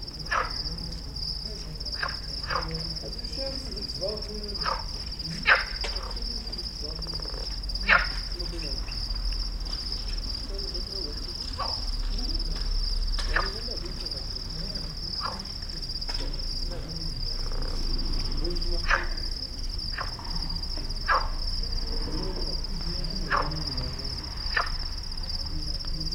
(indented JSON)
{
  "title": "Tafraout, Riverside, Frog and insects",
  "date": "2006-09-06 20:26:00",
  "description": "Africa, Morocco, frog, insects, night",
  "latitude": "29.72",
  "longitude": "-8.97",
  "altitude": "1000",
  "timezone": "Africa/Casablanca"
}